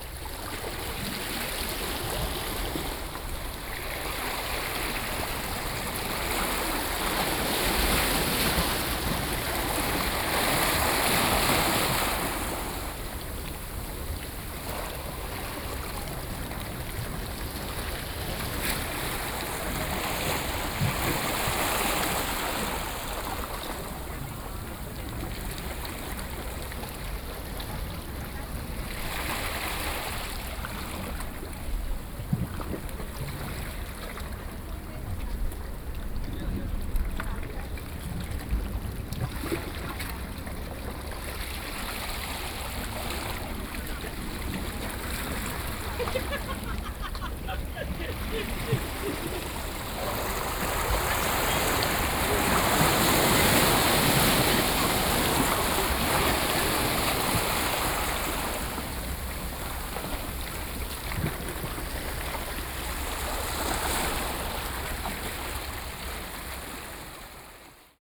tuman, Keelung - Waves
Ocean waves crashing sound, Sony PCM D50